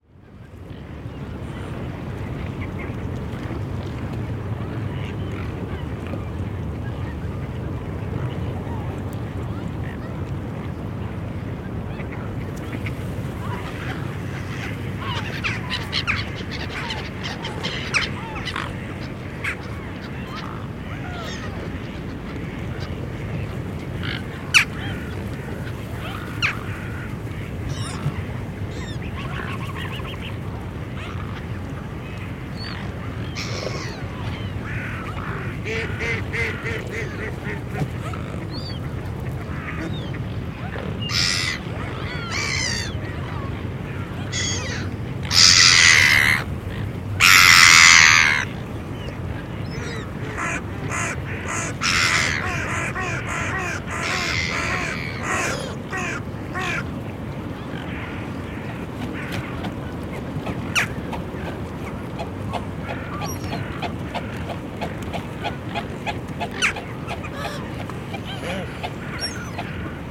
birds on the frozen sea, Tallinn
small water holes open on the frozen sea attracting birds of every kind